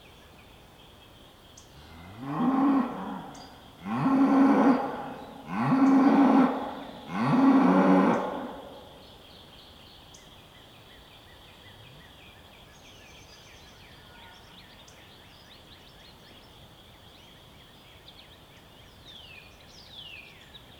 Missouri, USA - Bulls and cows in a field in Missouri, USA
Some bulls and cows calling and mooing in the countryside of Missouri. Birds and light wind in the trees in background. Sound recorded by a MS setup Schoeps CCM41+CCM8 Sound Devices 788T recorder with CL8 MS is encoded in STEREO Left-Right recorded in may 2013 in Missouri, close to Bolivar (an specially close to Walnut Grove), USA.
MO, USA